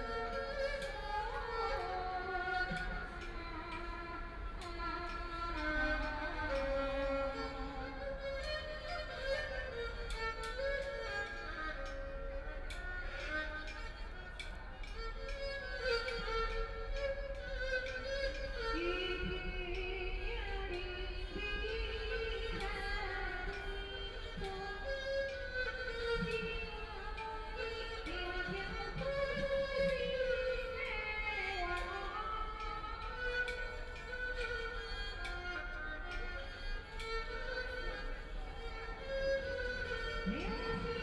Penglai Park, Shanghai - singing in the Park
Several elderly people are singing traditional music, Erhu, Binaural recordings, Zoom H6+ Soundman OKM II